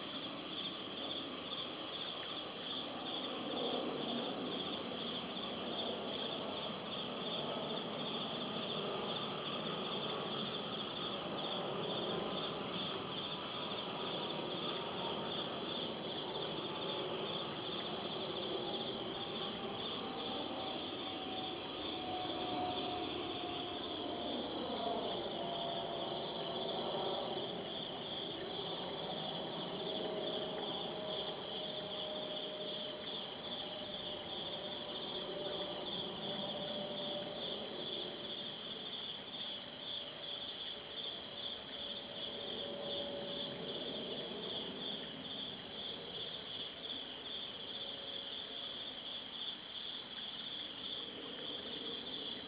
Outside the entrance of Wan Tsai South Campsite at midnight, Wan Tsai Nature Trail, Sai Kung, Hong Kong - Outside the entrance of Wan Tsai South Campsite at midnight
Located outside the entrance of the campsite on Wan Tsai Peninsula in the Sai Kung West Country Park (Wan Tsai Extension), next to the Long Harbour (Tai Tan Hoi). You can hear the birds and bugs at 04:30 a.m. and a plane flying above.
位於西頁西郊野公園灣仔擴建部分內的灣仔半島的灣仔南營地正門外，鄰近大灘海。你可以聽到深夜四時半的蟲嗚鳥響，和夜行的飛機越過的聲音。
#Night, #Cricket, #Bird, #Plane